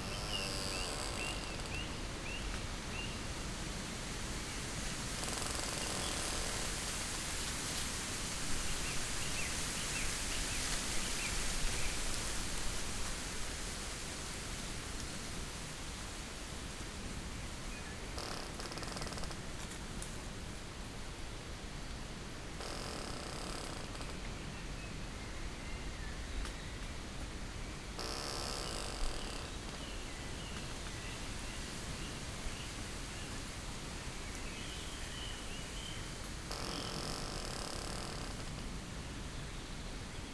Niedertiefenbach, Beselich - squeaking tree, forest ambience
Beselich Niedertiefenbach, forest edge, wind and squeaking trees, evening ambience
(Sony PCM D50, DPA4060)